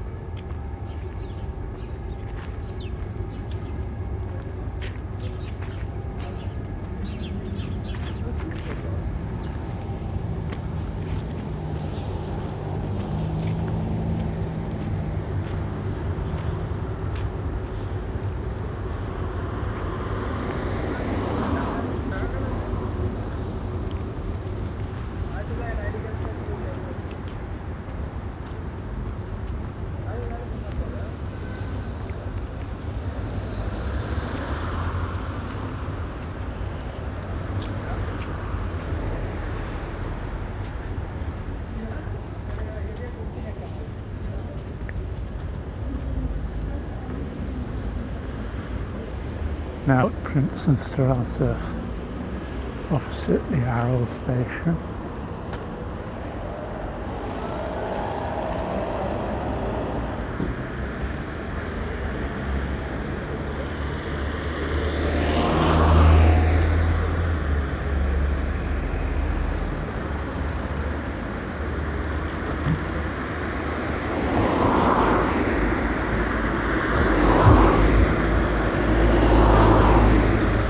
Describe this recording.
This recording covers the walk from the spot marked on the map, through the spaces between apartments blocks to busy Princenstrasse. Jays squawk in the tree tops and my footsteps shuffle through dry fallen leaves. There are distance shouts from the nearby Lobecksportsplatz, which always seems busy. These green spaces between the buildings were once completely accessible. However they are now crossed by a maze of dark green wire fences. It's often hard, if not impossible, to find a way through. On this occasion I had to almost retrace my steps to get out.